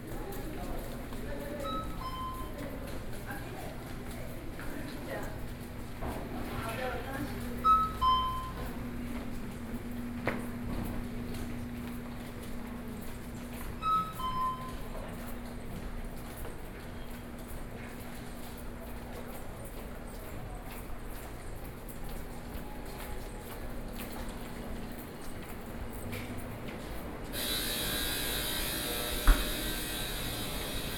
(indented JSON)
{
  "title": "Xizhi Station, New Taipei City - soundwalk",
  "date": "2012-11-04 07:05:00",
  "latitude": "25.07",
  "longitude": "121.66",
  "altitude": "15",
  "timezone": "Asia/Taipei"
}